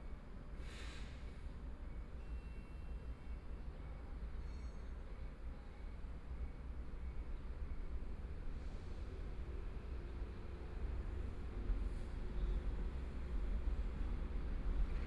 Dongshan Station, Yilan County - In the station platform
In the station platform, Environmental sounds of the station, Station broadcast messages, Train arrived, Binaural recordings, Zoom H4n+ Soundman OKM II